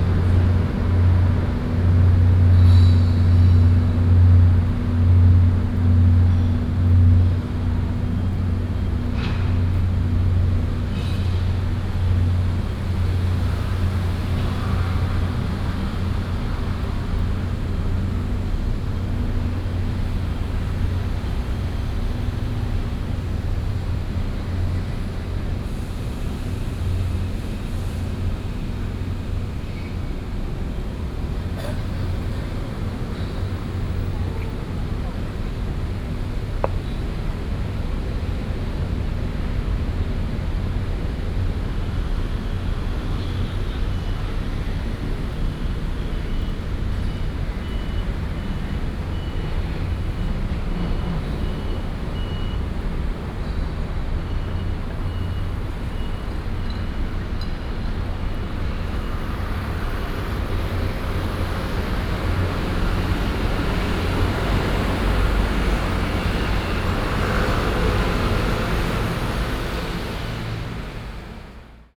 Walking along the outside of the stadium, Noise Generator and TV satellite trucks noise
Da’an District, Taipei City, Taiwan